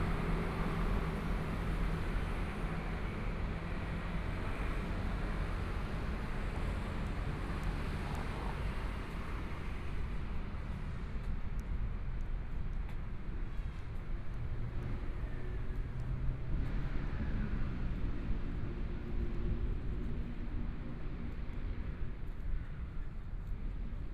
Zhongzheng Dist.Taipei - walking in the Street
Walking in the small streets, Traffic Sound, Binaural recordings, Zoom H4n+ Soundman OKM II